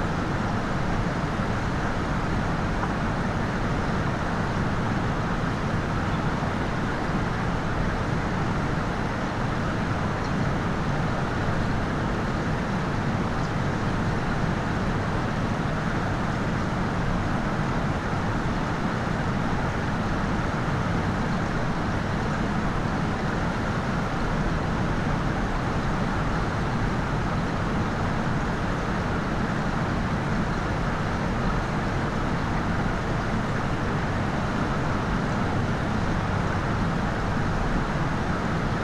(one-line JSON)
{"title": "Pempelfort, Düsseldorf, Deutschland - Düsseldorf, Münstertherme, swim hall", "date": "2013-01-14 08:30:00", "description": "Inside an old, classical designed public swim hall. The sound of the empty hall ventilation and heating system and the silent gurgle and splishes of the water in the pool.\nIn the distance accents and voices of workers who clean the place.\nThis recording is part of the intermedia sound art exhibition project - sonic states\nsoundmap nrw -topographic field recordings, social ambiences and art places", "latitude": "51.24", "longitude": "6.78", "altitude": "43", "timezone": "Europe/Berlin"}